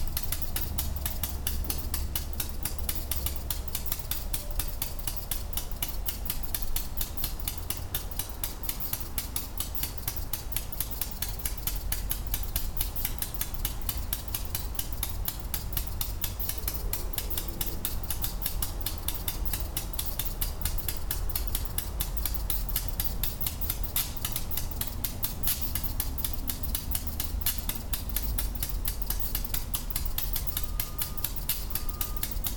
Buckingham 5 Train @ Charlottesville Station - Buckingham 5 train @ Buckingham Branch Charlottesville Station, train sound recorded from distance